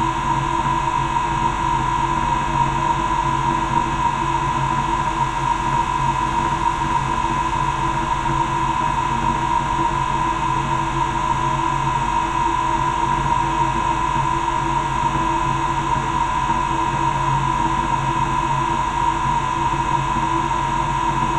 Malinowa, Sasino, Polska - water pump
sound of a water pump installed in a well delivering water to a sprinkler system. (roland r-07)
województwo pomorskie, Polska, 23 August 2020, 10:24